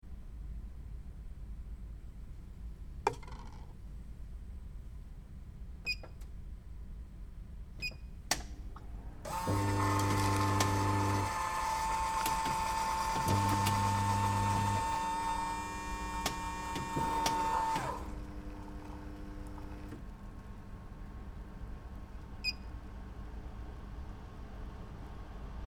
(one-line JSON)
{"title": "Aldwarke Lane, Rotherham, hot drinks dispenser", "date": "2010-07-06 18:14:00", "description": "Hot drinks dispenser", "latitude": "53.45", "longitude": "-1.33", "altitude": "27", "timezone": "Europe/London"}